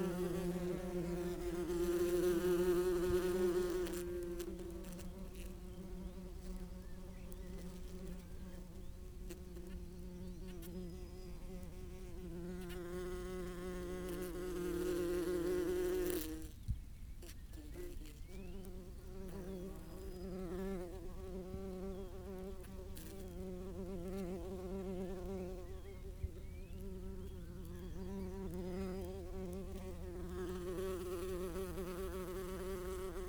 Luttons, UK - Open bees nest ...
Open bees nest ... bees nest had been opened ... by a badger ..? the nest chamber was some 15cm down ... placed my parabolic at the edge of the hole and kept as far back as the cable would allow ..! no idea of the bee species ... medium size bumble bees with a white rump ... obviously not the solitary type ... background noise ...
Malton, UK, 19 June 2017